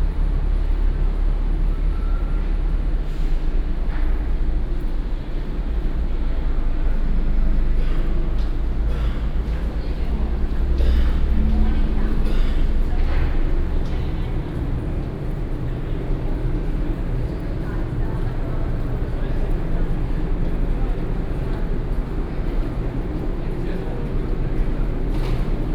Jianguo Rd., Central Dist., Taichung City - Walking in the underpass
Walking in the underpass, Traffic Sound, Air conditioning noise
Taichung City, Taiwan, 6 September 2016